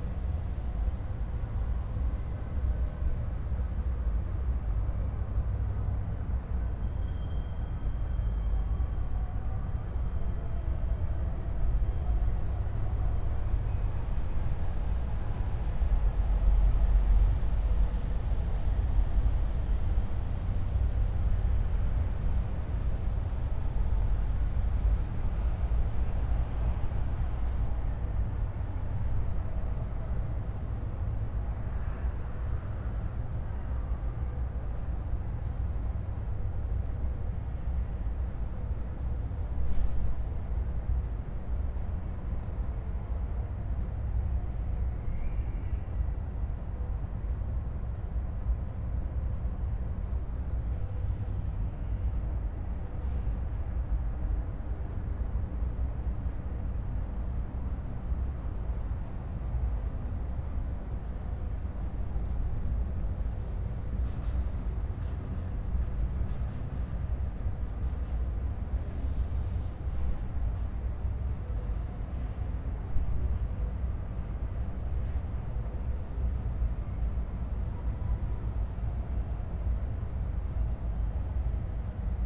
Berlin Bürknerstr., backyard window - distant Mayday demonstration drone
1st of May demonstration drone from afar
(Sony PCM D50, Primo EM172)
Berlin, Germany, 1 May 2017